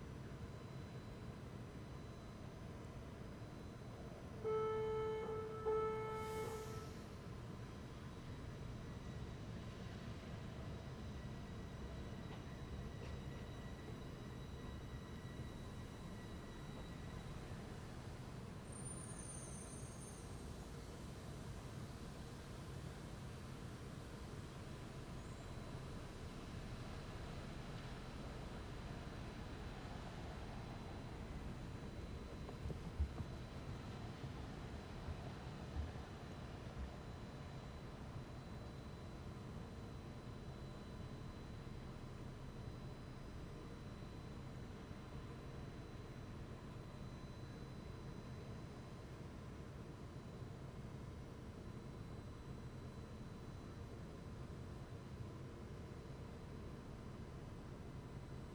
Yonge St, Toronto, ON, Canada - Yonge street crossing / stranded train
Yonge street crossing with two stranded trains.
Uši Pro + ZoomH6
Ontario, Canada, 28 November 2021, 08:25